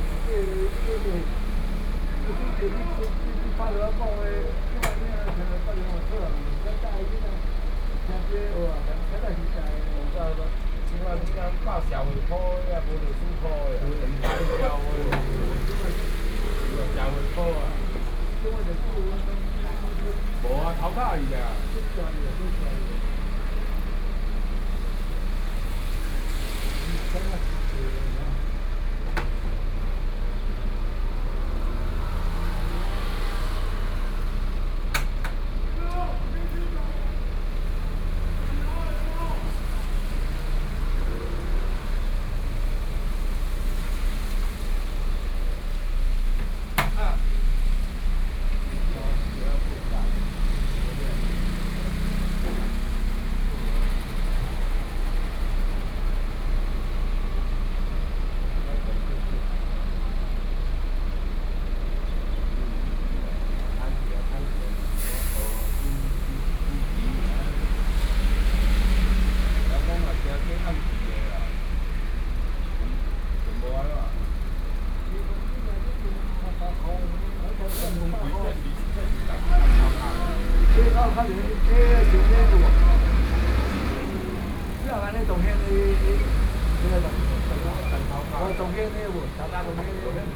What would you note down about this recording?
A group of taxi drivers chatting and playing chess, There are close to selling fish sounds, Binaural recordings, Zoom H4n+ Soundman OKM II